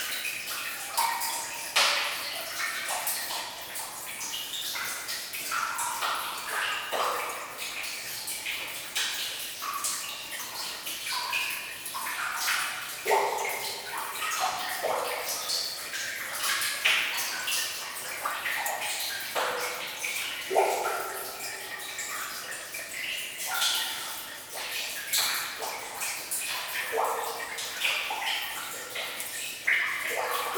Andenne, Belgique - Underground mine
Short soundscape of an underground mine. Rain into the tunnel and reverb.